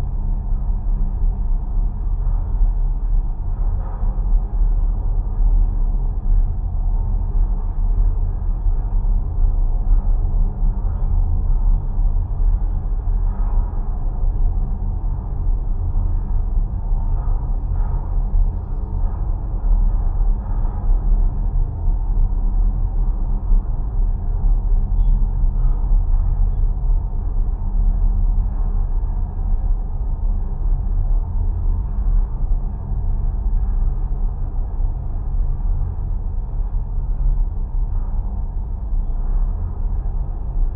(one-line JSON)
{"title": "Jannali, NSW, Australia - Transmission tower in a small area of bushland in Jannali", "date": "2014-09-06 15:00:00", "description": "I remember putting my ears against this tower a few months ago and have been wanting to record it since but couldn't as I was waiting for one of my contact microphones to be repaired and to be delivered. There was a problem with the postage and the first microphone never arrived after two months. Another was sent two weeks ago and I finally received it yesterday, along with two XLR impedance adaptors, so I am able to use my contact mics and hydrophones again!\nRecorded with two JRF contact microphones (c-series) into a Tascam DR-680.", "latitude": "-34.02", "longitude": "151.07", "altitude": "31", "timezone": "Australia/Sydney"}